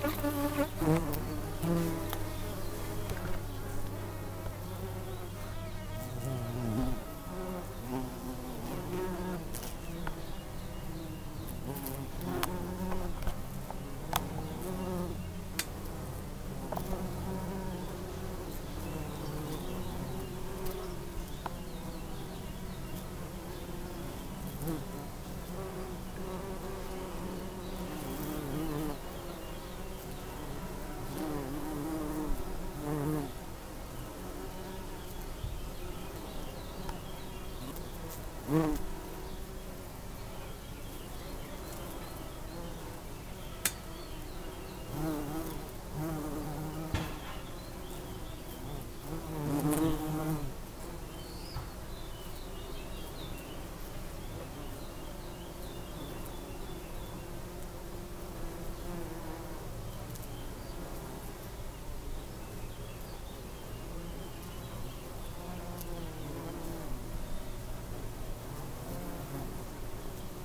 alto, bumble bees in a lavender bush
early in the morning, humble bees in a lavender bush
soundmap international: social ambiences/ listen to the people in & outdoor topographic field recordings